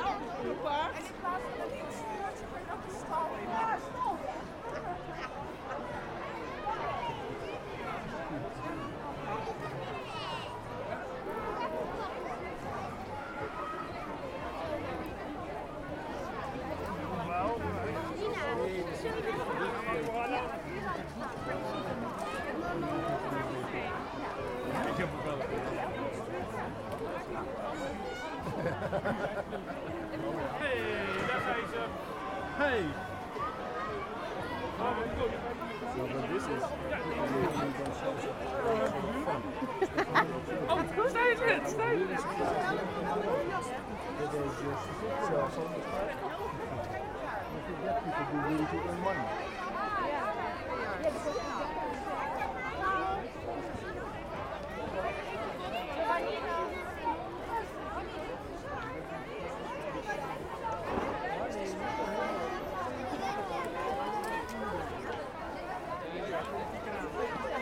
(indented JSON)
{
  "title": "Hoogstraat, Abcoude, Netherlands - Kingsday in Abcoude",
  "date": "2018-04-30 12:16:00",
  "description": "Moving audio (Binaural) on a flea market at Kingsday in the Netherlands.",
  "latitude": "52.27",
  "longitude": "4.97",
  "altitude": "6",
  "timezone": "Europe/Amsterdam"
}